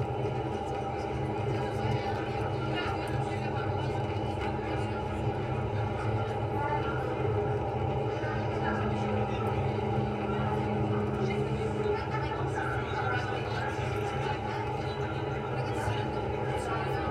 ferry boat railing vibrations, Istanbul
contact microphone placed on the railing of a ferry boat